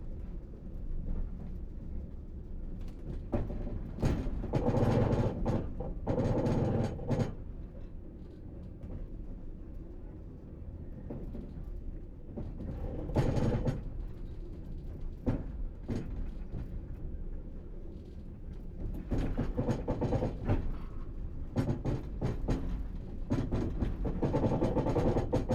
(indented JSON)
{
  "title": "Somewhere between Tashkent and Bukhara, Uzbekistan - Night train, stopping and starting",
  "date": "2009-08-17 05:15:00",
  "description": "Night train, stopping and starting, juddering and shaking",
  "latitude": "39.98",
  "longitude": "67.44",
  "altitude": "703",
  "timezone": "Asia/Samarkand"
}